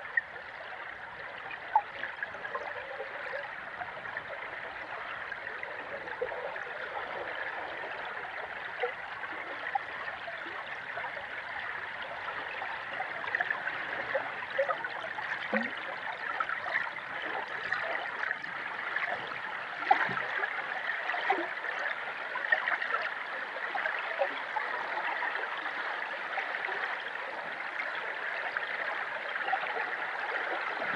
Kelvin Walkway, Glasgow, UK - Kelvin Hydrophone
Recorded on a Sound Devices 633 with an Aquarian Audio H2a Hydrophone
16 September 2018